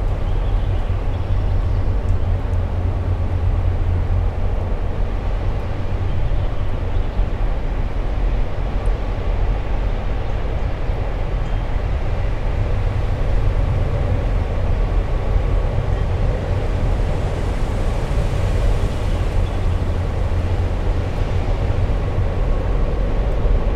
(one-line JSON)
{"title": "Columbia Gorge train and wind noise", "description": "a windy day in the gorge makes the passing trains blend in", "latitude": "45.59", "longitude": "-122.17", "altitude": "74", "timezone": "Europe/Tallinn"}